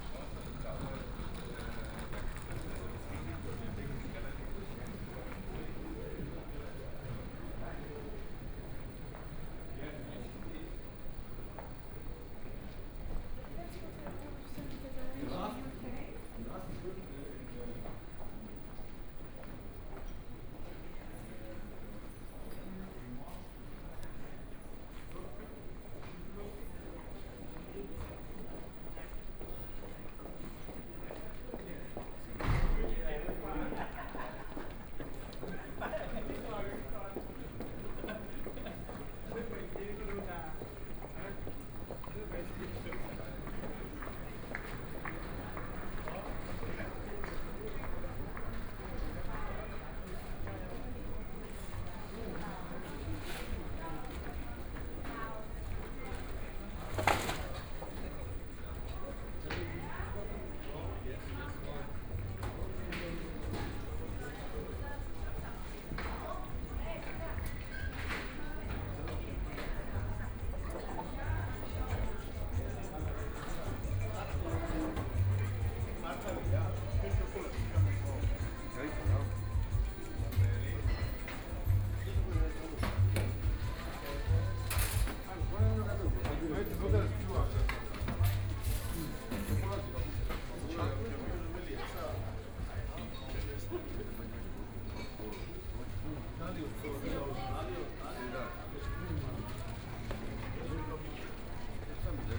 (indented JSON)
{
  "title": "Munich International Airport, 德國 - In the restaurant",
  "date": "2014-05-11 20:10:00",
  "description": "Walking in Airport Terminal, In the restaurant",
  "latitude": "48.35",
  "longitude": "11.79",
  "altitude": "445",
  "timezone": "Europe/Berlin"
}